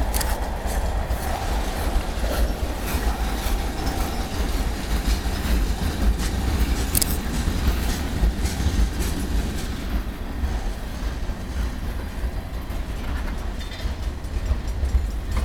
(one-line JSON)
{"title": "Montreal: Train Tracks in St. Henri - Train Tracks in St. Henri", "date": "2008-01-26 12:22:00", "description": "equipment used: iPod DIY custom Binaural Headphone mounted mics DIY mic amplifiers and Belkin iPod interface\nI wanted to capture the train sounds in St. Henri a historic rail hub of Quebec.It is a recoring of a complete train passing, with many different types of car going by so it makes it quite dynamic. Sadly you can hear my camera going off early in the recording, but i thought it was ok anyway...", "latitude": "45.47", "longitude": "-73.59", "altitude": "23", "timezone": "America/Montreal"}